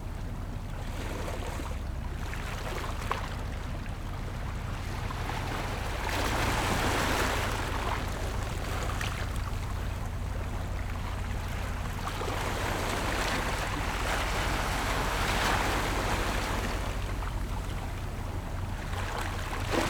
清水村, Nangan Township - Tide
Wetlands, Tide
Zoom H6 +Rode NT4
福建省, Mainland - Taiwan Border, 14 October 2014, ~10:00